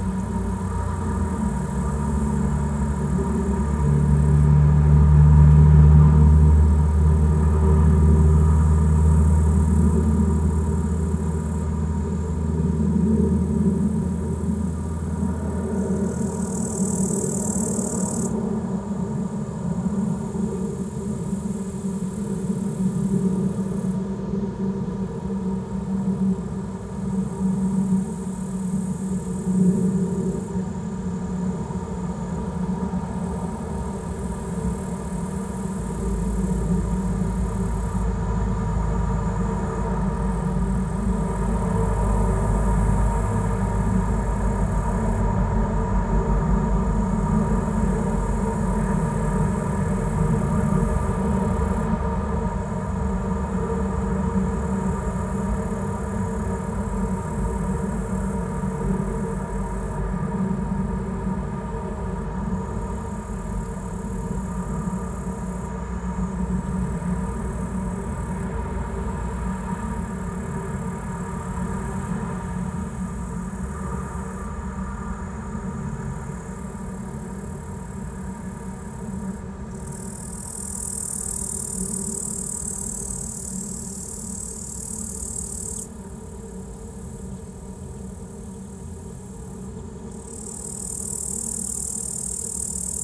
small omni mics in two metallic tubes - remains from some kind of soviet kindergarten "toys"
Utenos apskritis, Lietuva, 19 August 2019, ~18:00